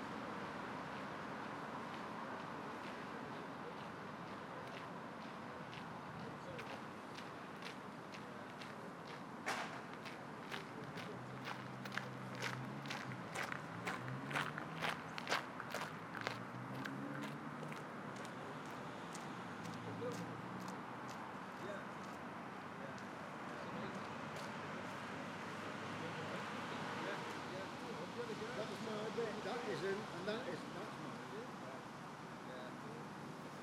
Sales Canal
Canal, boats, pedestrians, bicycles.
22 September, ~14:00